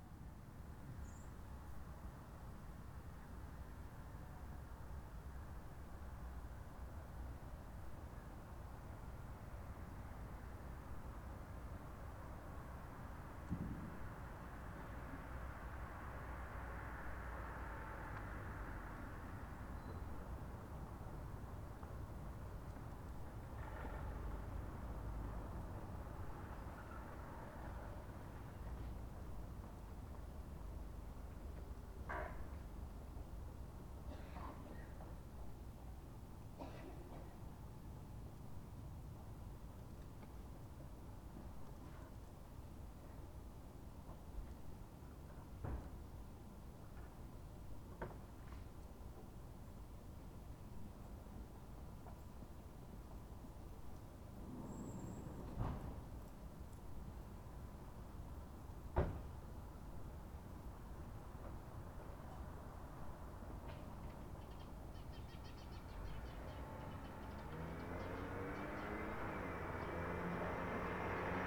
oderstraße/okerstraße: am sicherheitszaun des flughafens tempelhof - the city, the country & me: at the security fence of formerly tempelhof airport
cold afternoon, lightly trafficked street, cars, cyclists, pedestrians, birds
the city, the country & me: december 13, 2009